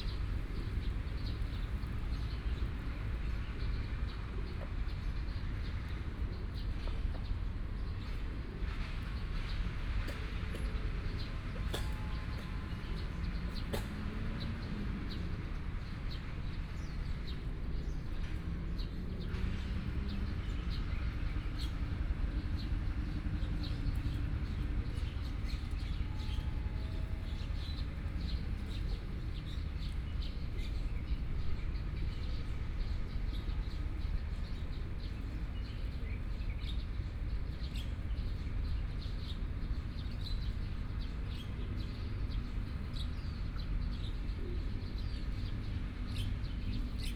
二二八和平公園, Kaoshiung City - in the Park
Birdsong, Sparrow, Pigeon, Traffic Sound, Road construction noise, Hot weather
Yancheng District, Kaohsiung City, Taiwan, 14 May 2014, 11:52